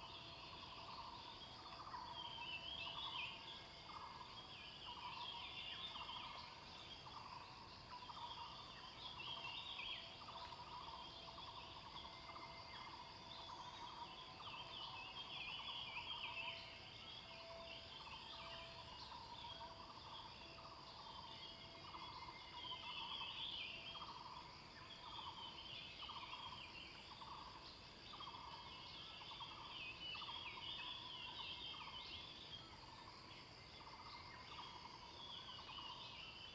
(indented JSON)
{
  "title": "桃米里, Puli Township, Nantou County - Early morning",
  "date": "2016-05-25 05:23:00",
  "description": "Bird sounds, Traffic Sound\nZoom H2n MS+XY",
  "latitude": "23.94",
  "longitude": "120.92",
  "altitude": "550",
  "timezone": "Asia/Taipei"
}